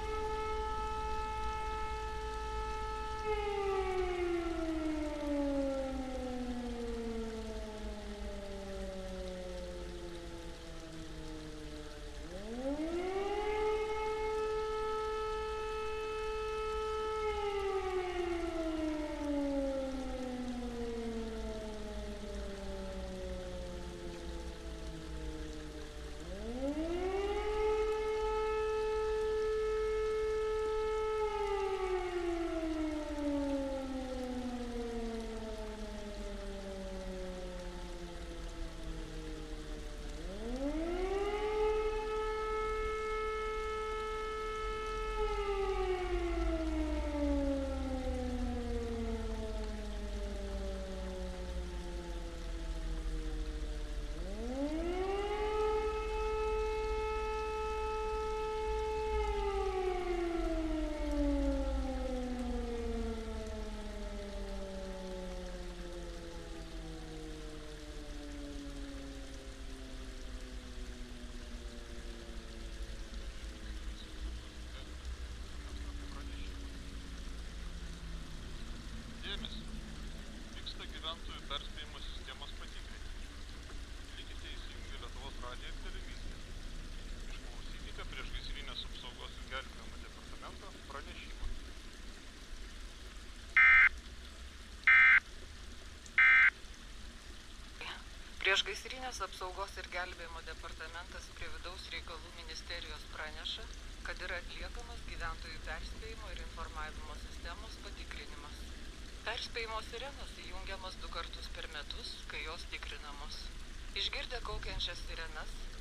checking alarm system of civil safety. omni mics and fm radio
Utena, Lithuania, 17 October 2018, 11:50